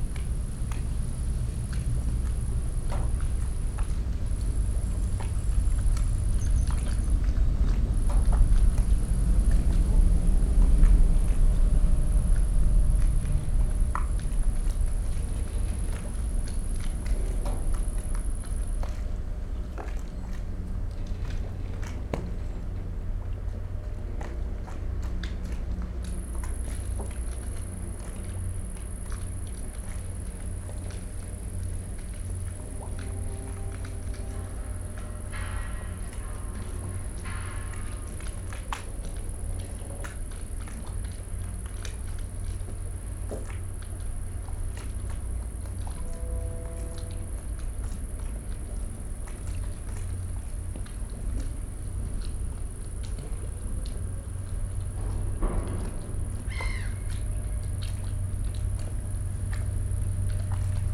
Niehler Hafen, Cologne, Germany - harbour, evening ambience
harbour Köln-Niehl, at water level, small waves hitting the body of a ship. a cricket. distant sounds of harbour work. heavy drones of a cargo train passing above me. quiet squeaking from the ship as it moves in the light breeze.
(Sony PCM D50, DPA4060)
Deutschland, European Union, 18 July 2013